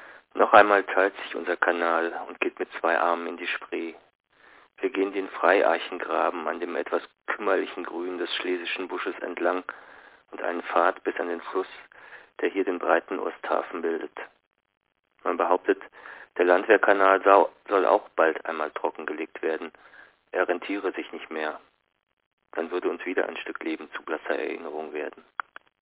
Der Landwehrkanal (10) - Der Landwehrkanal (1929) - Franz Hessel